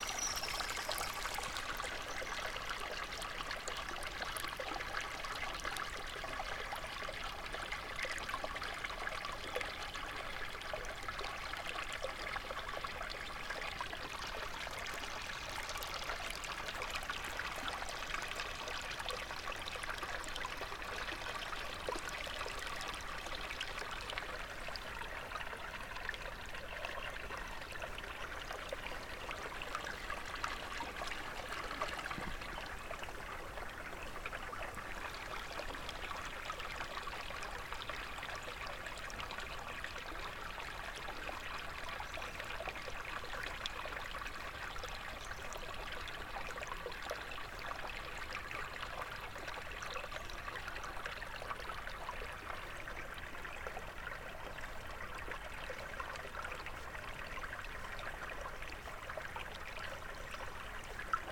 Serra Preta, BA, Brasil - Águas correntes do Rio Paratigi
Som da correnteza do Rio Paratigi, localizado no município de Serra Preta-Ba a cerca de três Km do Distrito de Bravo.
O Rio paratigi é bastante utilizado pelos moradores da região para atividades de lazer nos períodos de chuva. o som foi captado com um gravador tascam com o microfone direcional como atividade da disciplina de SONORIZAÇÃO do curso de CINEMA E AUDIOVISUAL da UFRB.